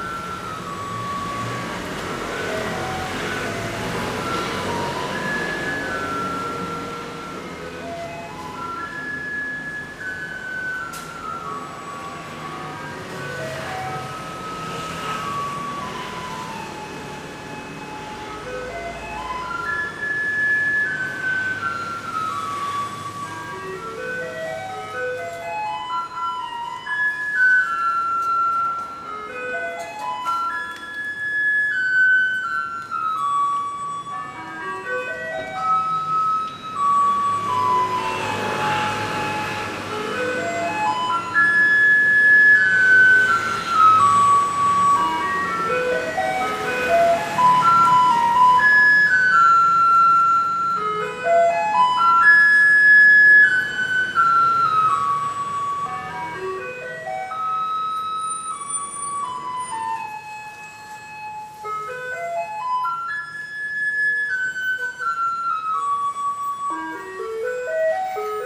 A garbage truck approaches and stops at the curb of the yellow claw-machine arcade, next door to Simple Mart on Zhongzheng West Rd. The truck produces the near-deafening melody, to alert local residents of its arrival. The truck's compactor is also activated. Stereo mics (Audiotalaia-Primo ECM 172), recorded via Olympus LS-10.
新竹縣, 臺灣, 29 July, ~17:00